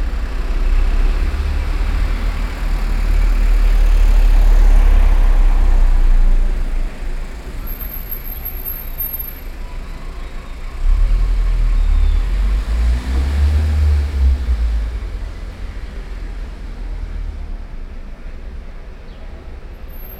Sete, Rue Pons de lHerault
Sète, Rue Pons de lHérault
on the balcony, seagulls in the background.
Sète, France, July 2011